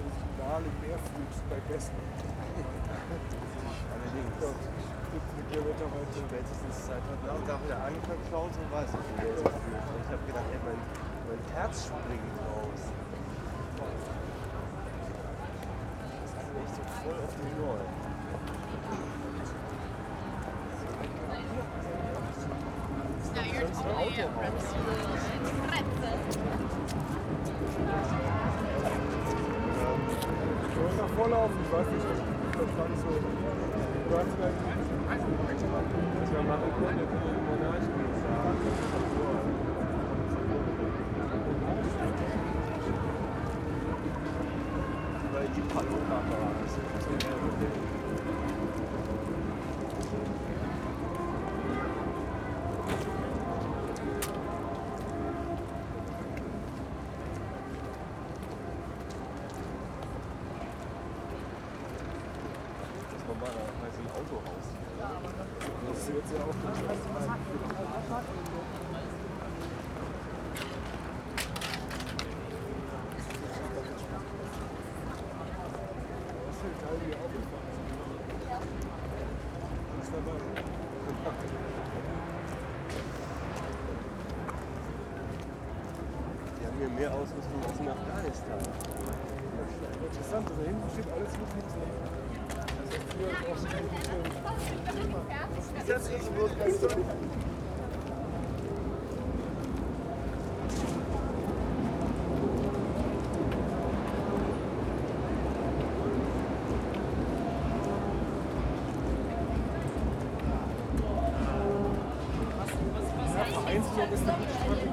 berlin, skalitzer straße: 1st may soundwalk (3) - the city, the country & me: 1st may soundwalk (3)
1st may soundwalk with udo noll
the city, the country & me: may 1, 2011
Berlin, Germany, 1 May 2011, 23:35